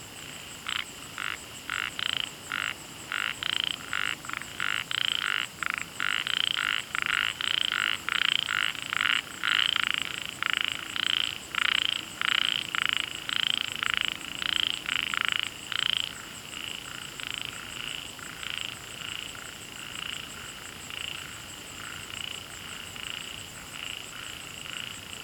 茅埔坑溼地公園, 桃米里, Taiwan - Frogs chirping
Frogs chirping, Wetland
Zoom H2n MS+XY
Puli Township, 桃米巷11-3號, 11 August 2015, ~20:00